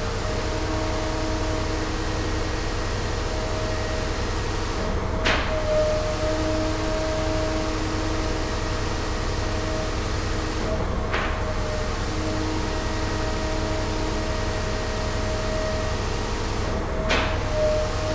arbeitsgeräusche einer betonmischpumpanlage
soundmap nrw
project: social ambiences/ listen to the people - in & outdoor nearfield recordings
15 June, 12:11